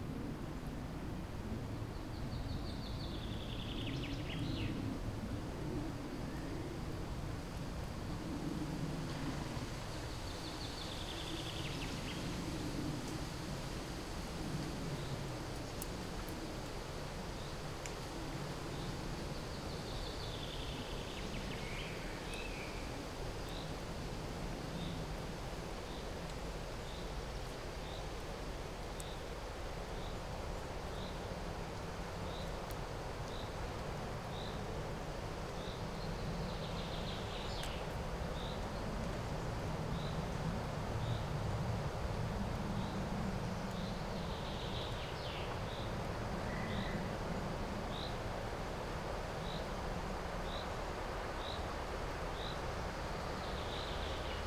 {"title": "Bonaforth, Höllegrundsbach, Deutschland - Höllegrundsbach 01", "date": "2012-05-25 17:41:00", "description": "recording in the dry creek bed of the Höllegrundsbach", "latitude": "51.40", "longitude": "9.61", "altitude": "217", "timezone": "Europe/Berlin"}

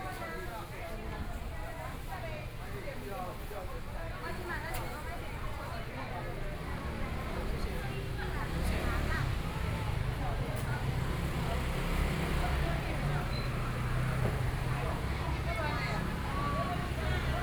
{"title": "Qingshui St., Tamsui Dist. - Traditional Market", "date": "2013-11-17 11:24:00", "description": "Walking through the traditional market, Market within a very narrow alley, Binaural recordings, Zoom H6+ Soundman OKM II", "latitude": "25.17", "longitude": "121.44", "altitude": "14", "timezone": "Asia/Taipei"}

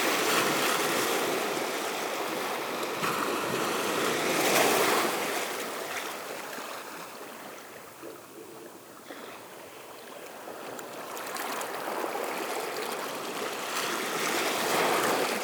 {"title": "Ars-en-Ré, France - The sea on a jetty", "date": "2018-05-22 10:00:00", "description": "On a jetty, the beautiful waves during a time when the sea is going slowly to low tide.", "latitude": "46.19", "longitude": "-1.51", "timezone": "Europe/Paris"}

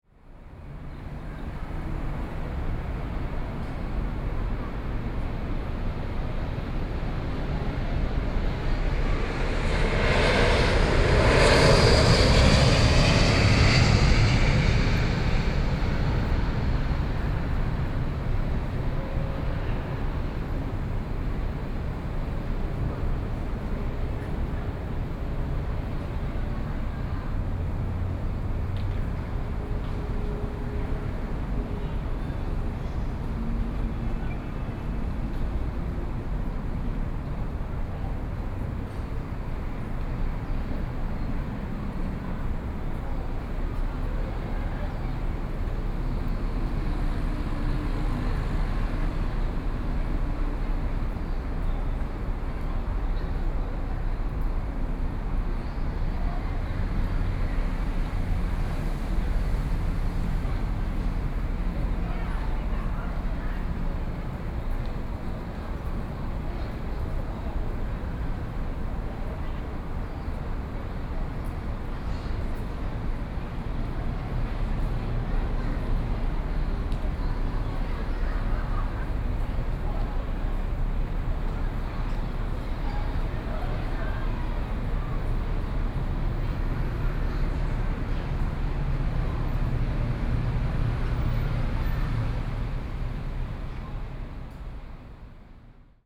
{"title": "TAIPEI FINE ARTS MUSEUM, Taiwan - Aircraft flying through", "date": "2014-05-04 16:01:00", "description": "Aircraft flying through, Traffic Sound", "latitude": "25.07", "longitude": "121.53", "altitude": "7", "timezone": "Asia/Taipei"}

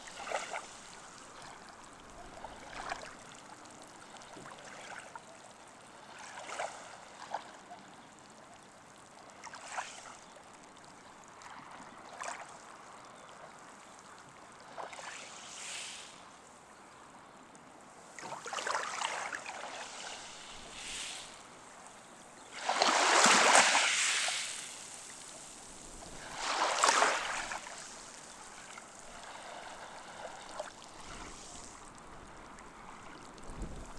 {"title": "Kissamos, Crete, sea and sand", "date": "2019-04-30 12:35:00", "description": "om the seashore, waves playing with stones and sands", "latitude": "35.51", "longitude": "23.63", "altitude": "2", "timezone": "Europe/Athens"}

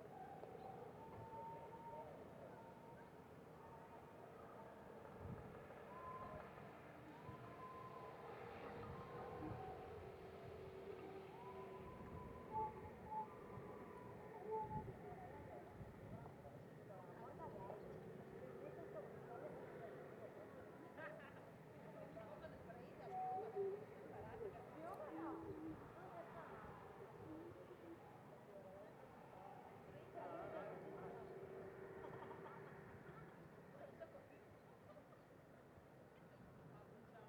{"title": "Duino-Aurisina TS, Italien - Duino-Aurisina - End of local soccer game", "date": "2016-09-10 18:03:00", "description": "Local soccer game (Campionale regionale dilettanti, promozione girone B) between Sistiana Duino Aurisina (hosts) and Domio (guests). The game started at 4pm. Domio wins 2:1, no goals during the recording.\n[Sony PCM-D100 with Beyerdynamic MCE 82]", "latitude": "45.78", "longitude": "13.64", "altitude": "104", "timezone": "Europe/Rome"}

